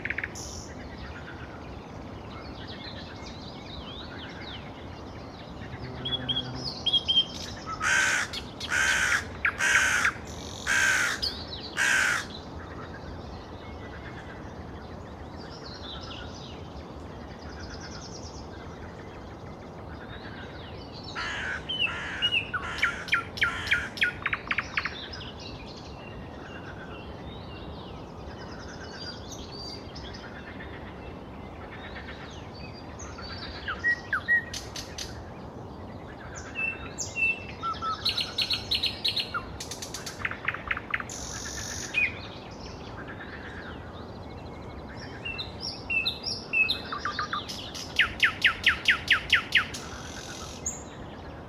Morning, Birds, Frogs, Train, Street Traffic
Moscow, Russian Federation, 24 May 2010